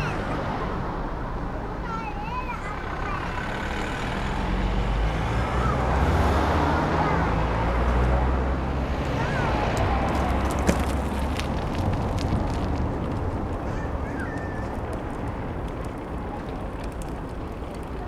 Berlin: Vermessungspunkt Friedelstraße / Maybachufer - Klangvermessung Kreuzkölln ::: 28.12.2012 :::17:06